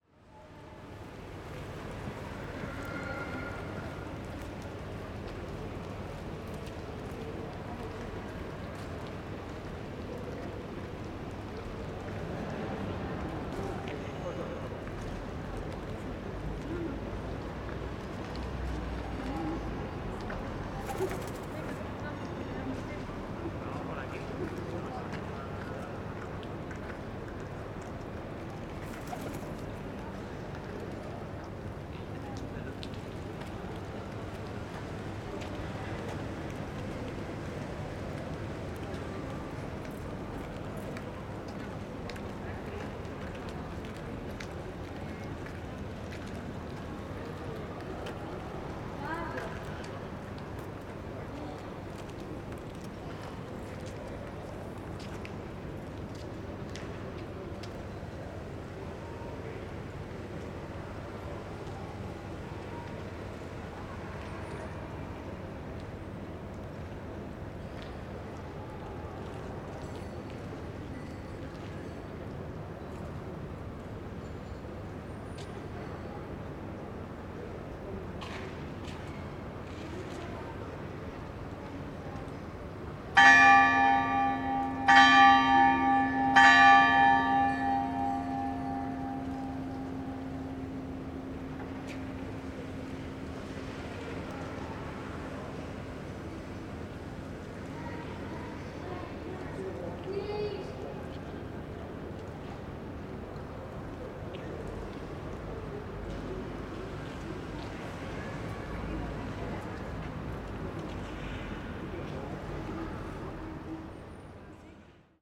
ambient sounds in the Hofburg courtyard